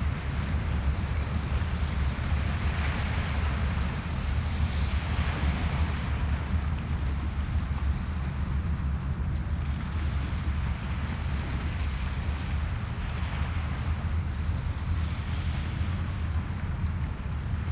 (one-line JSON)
{"title": "Staten Island", "date": "2012-01-12 14:38:00", "description": "waves on stony shore. passing pilot boat", "latitude": "40.61", "longitude": "-74.06", "altitude": "3", "timezone": "America/New_York"}